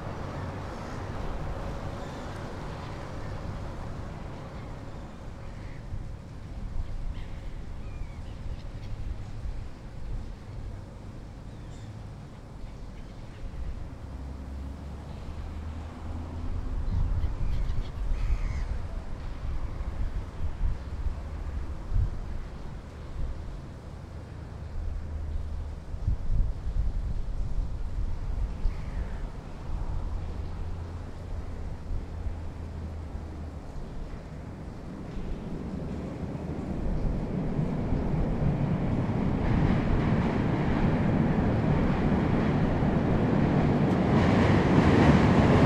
Soundscape of a railway bridge and the river
February 2011